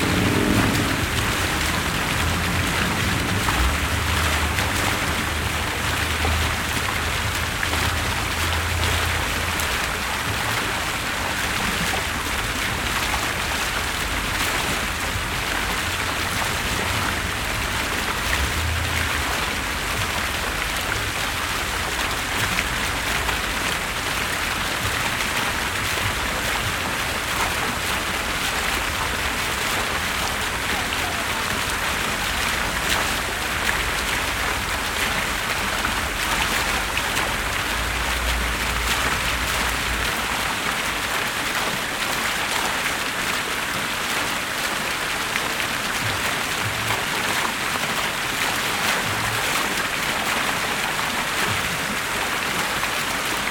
Norrmalm, Stockholm, Suecia - Maskrosbollen fontän
So de l'aigua a la font.
Sound of the water in the fountain.
Sonido de agua en la fuente.